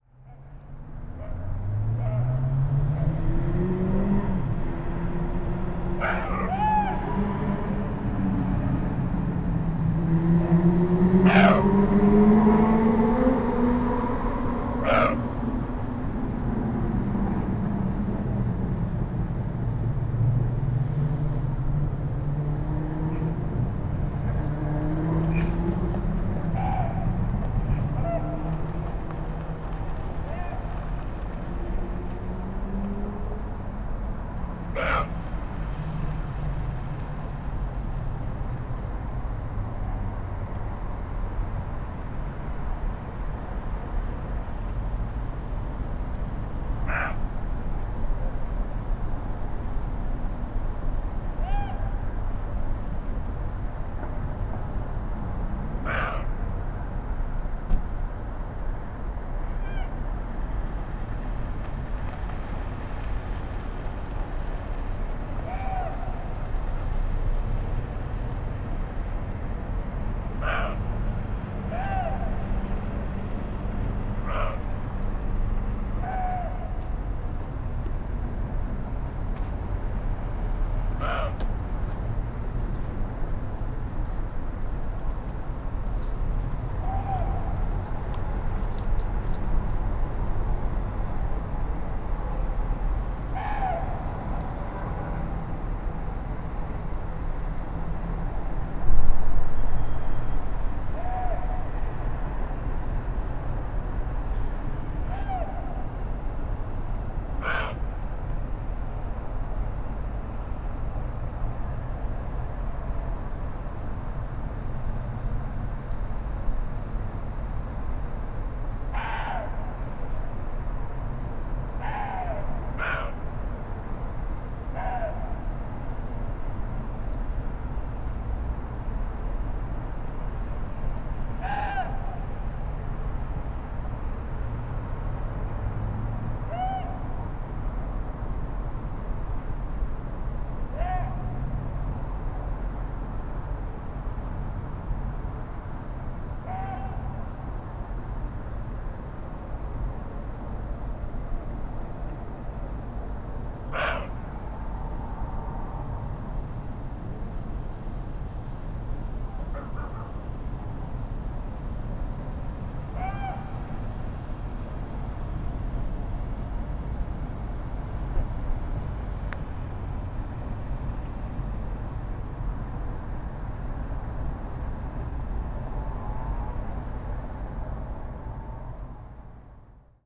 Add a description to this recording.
two, maybe three male deer barking and grunting on a grassland behind apartment building on a summer night. likely a territorial call since deer start to look for mating partners around that time of year and a new buck showed up in the area earlier that day (roland r-07)